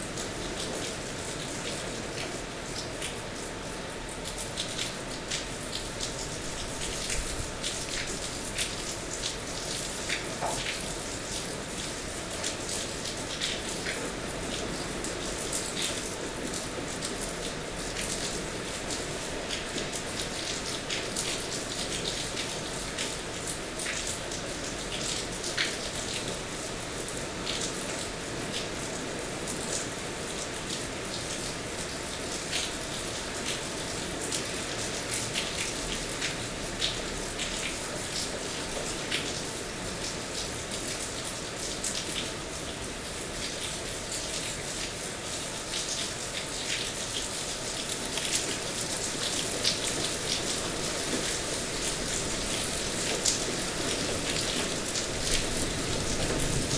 Le Fau, France - The sleet shower evening
During all the evening, a brutal sleet shower is falling on a small very solitary hamlet named Le Fau, in the Cantal mountains. From the front of a small degraded building, water is falling on the ground.